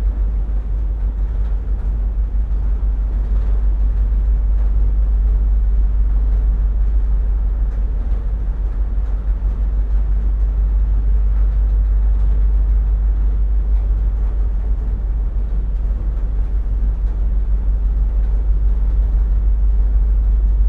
Pier Rd, Isle of Islay, UK - ferry ... in motion ...
Kennecraig to Port Ellen ferry to Islay ... in motion ... lavalier mics clipped to sandwich box ...